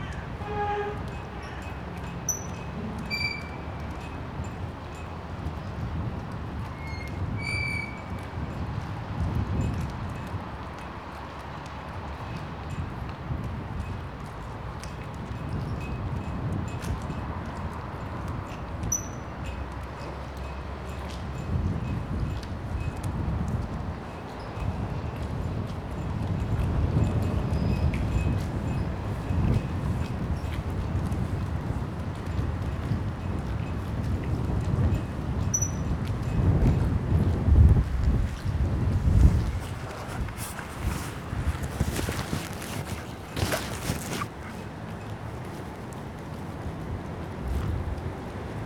boats and barges attached to a temporary, metal, floating pier. as the boats float on waves - metallic, whining sounds of the barge's broadsides rubbing against the pier. drumming of rigging. city sounds - ambulances, helicopters, traffic. water splashes reverberated over the hotel's architecture.
Lübeck, Germany, 3 October 2013